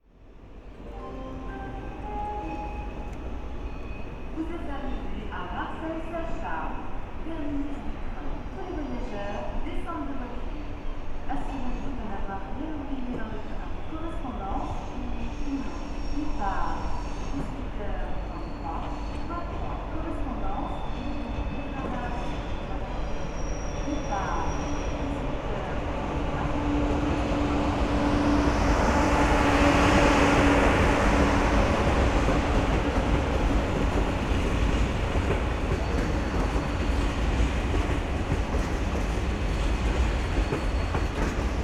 Belsunce, Marseille, France - Gare Saint Charles - Jérome Noirot from SATIS
Départs et arrivées des trains, voyageurs annonces...
Intérieur et extérieur de la gare
27 February 2012, 18:00